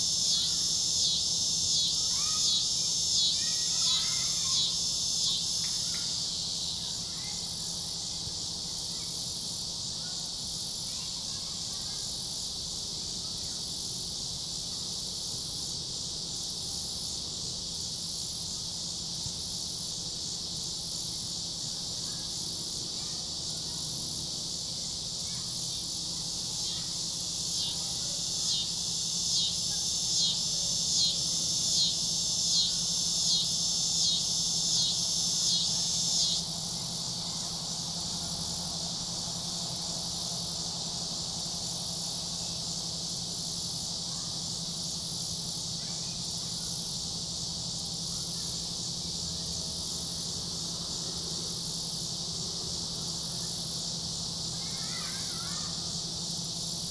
Soccer Fields, Valley Park, Missouri, USA - Idling Train
Incessant sound of cicadas with crescendos and decrescendos starting at 1:11. Train idles off to the left (heard best at 1:59) and children play soccer off to right.
Missouri, United States of America, 27 August 2020, ~19:00